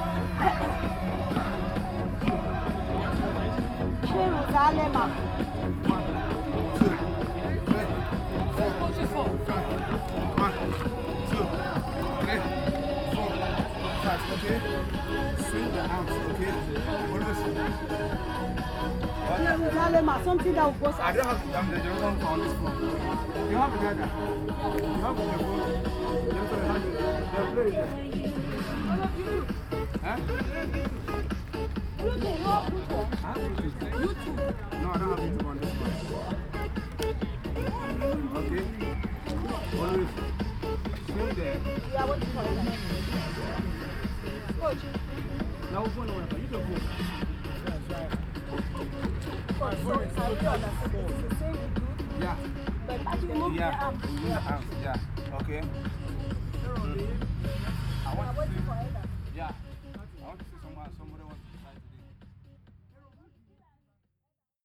Hoesch Park, Kirchderner Str., Dortmund, Germany - Sports at Hoesch Park
During afternoons in September, I found Hoesch Park in Dortmund peopled with community groups, young and old, doing their various exercises in the sports ground. This group caught my attention with an unfamiliar exercise of jumping on steps. A young sports man from Ghana called Thomas K Harry decided to dedicate his skills and experience to the well-being of the community free of charge.